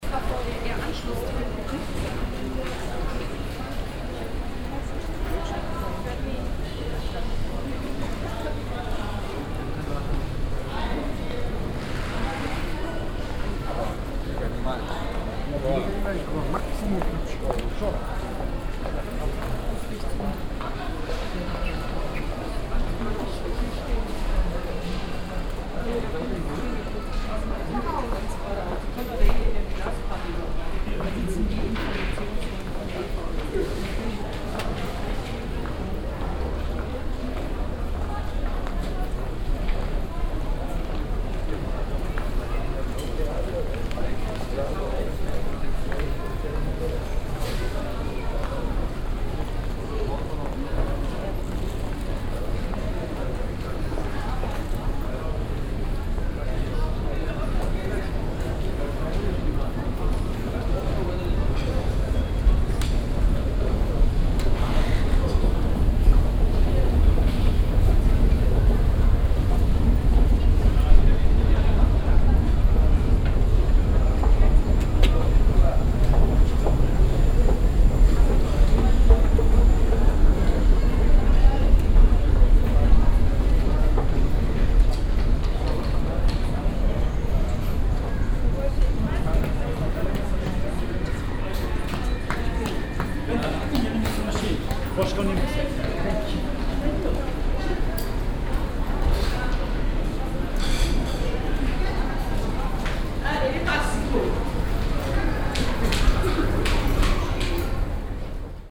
essen, main station, foyer
Das Betreten des Hauptbahnhofes von Essen an einem frühen Nachmittag. Menschen mit Gepäck gehen vorüber, Fragen an der Information - die generelle Atmosphäre.
Walking inside the main station of essen in the early afternoon. People with luggage passing by, questions at the information desk, general atmosphere.
Projekt - Stadtklang//: Hörorte - topographic field recordings and social ambiences
9 June, 19:56